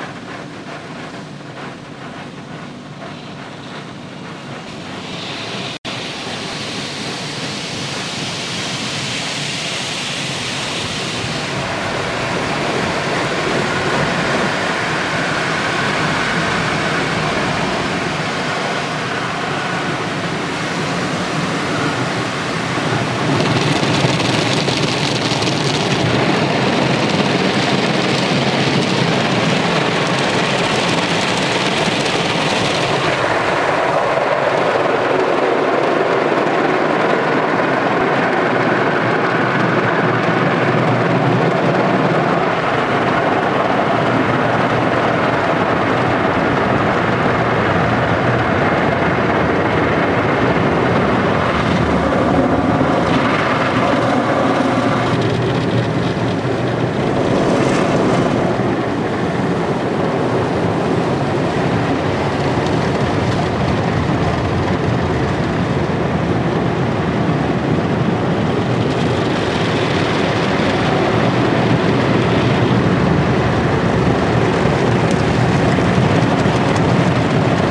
carwash, autowäsche, petrolstation
Berlin, Germany, 2 March, 08:55